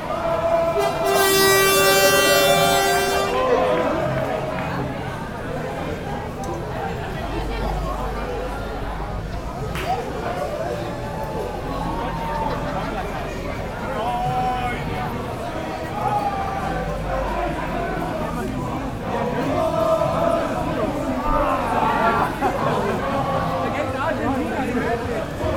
amsterdam, lijnbaansgracht, german soccer fans
a party of german soccer fans after the quarter final win over argentinia at the wm 2010
international city scapes - social ambiences and topographic field recordings
Amsterdam, The Netherlands, 7 July 2010